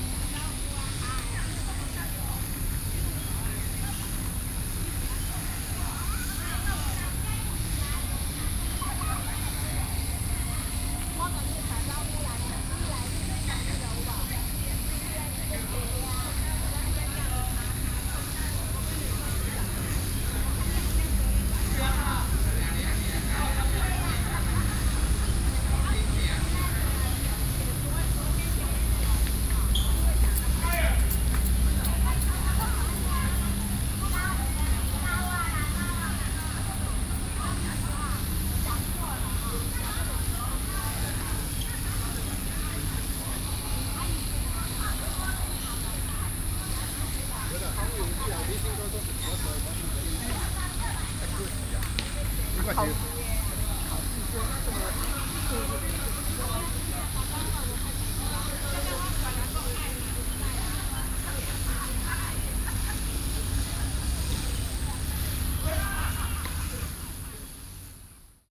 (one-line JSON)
{"title": "Perfection park, Taipei City - park", "date": "2012-06-23 07:55:00", "description": "Standing next to the embankment, Sony PCM D50 + Soundman OKM II", "latitude": "25.10", "longitude": "121.54", "altitude": "14", "timezone": "Asia/Taipei"}